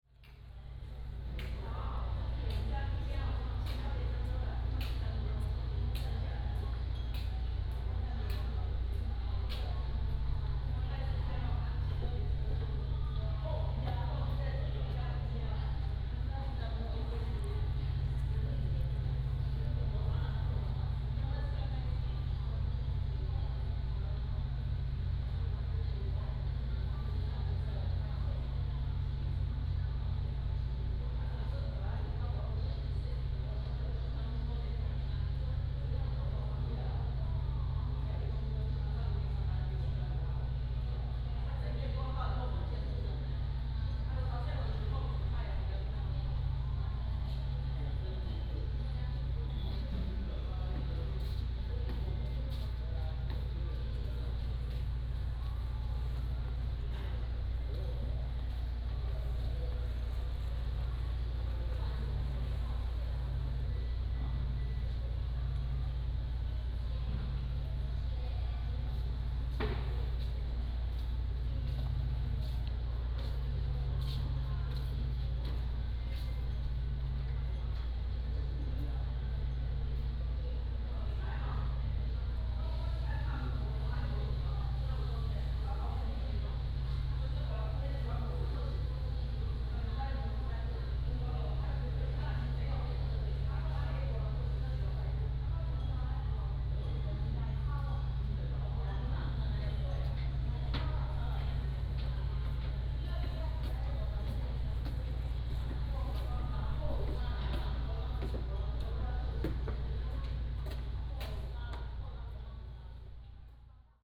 In the dock, In the visitor center, Waiting for a boat
福建省, Mainland - Taiwan Border, 4 November 2014, 12:35